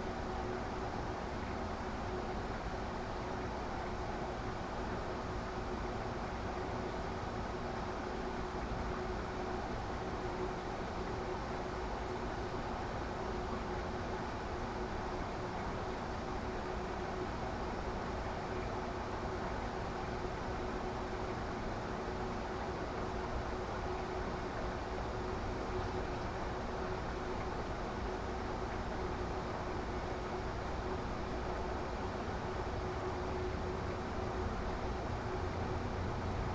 W Cache La Poudre St, Colorado Springs, CO, USA - El Pomar Drone #2
Resonating metal L-beams under a water heater of sorts.
26 April 2018, ~14:00